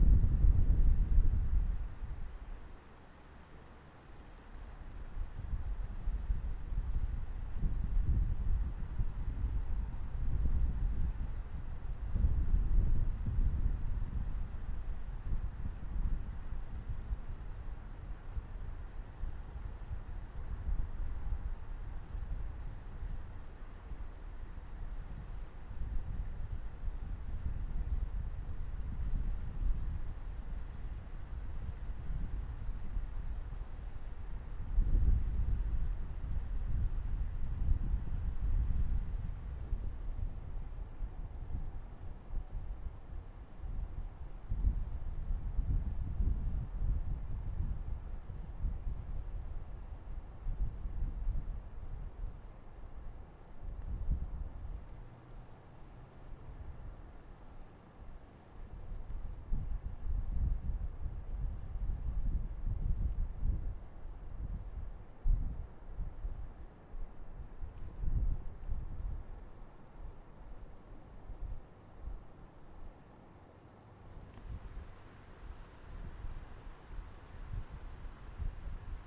Pikes Peak Greenway Trail, Colorado Springs, CO, USA - Monument Creek on a windy day
Recorded alongside the Eastern side of Monument Creek on a windy day. Used a Zoom H1 recorder. Wind and babbling water can be heard in the soundscape.